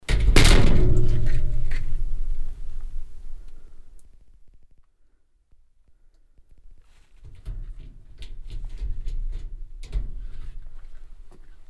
stolzembourg, old copper mine, exit - stolzembourg, old copper mine, exit door
The sound of closing the metal exit door of the mine.
Stolzemburg, alte Kupfermine, Ausgang
Das Geräusch der sich schließenden metallenen Ausgangstür der Mine.
Stolzembourg, ancienne mine de cuivre, sortie
Le bruit de la porte métallique de sortie de la mine qui se referme.
Project - Klangraum Our - topographic field recordings, sound objects and social ambiences